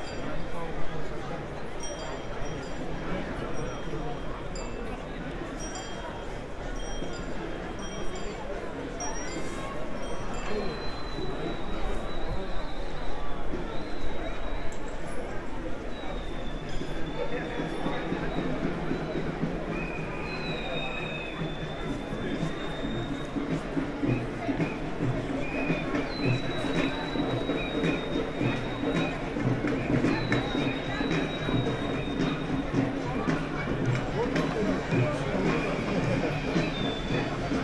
Berlin, Cafe Kotti - ambience on balcony, demonstration passing-by
ambience heard on the balcony of Zentrum Kreuzberg, sound of a demonstration, and a rare moment of only a few cars at this place.
(log of an radio aporee live session)